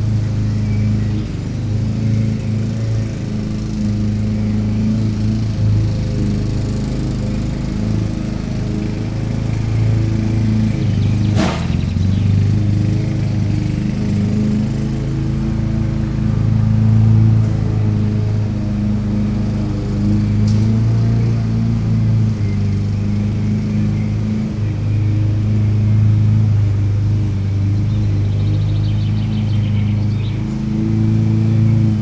burg/wupper, schlossbergstraße: friedhof - the city, the country & me: cemetery
gardener cutting gras
the city, the country & me: may 6, 2011